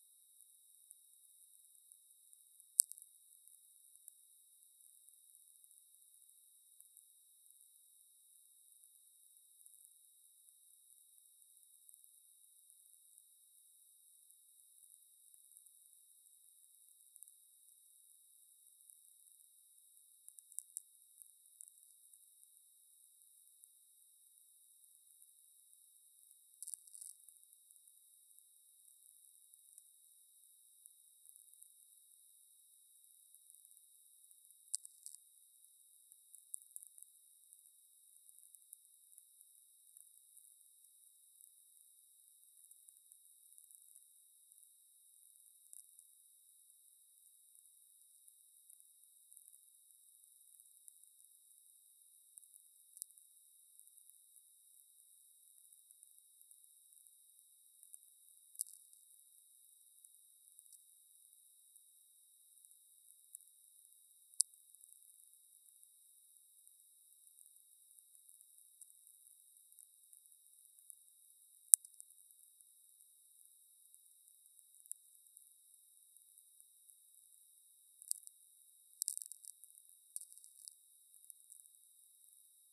Grybeliai, Lithuania, listening to atmospheric vlf
handheld VLF receiver. listening to distant lightnings and atmospheric electricity